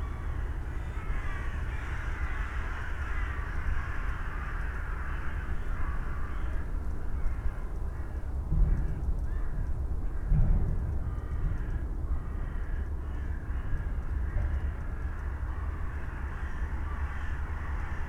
Berlin, Plänterwald, Spree - coal freighter shunting
coal freighter shunting and freeing the water way from ice, in front of heating plant Klingenberg, ambience, crows, distant sounds from the power station
(Sony PCM D50, DPA4060)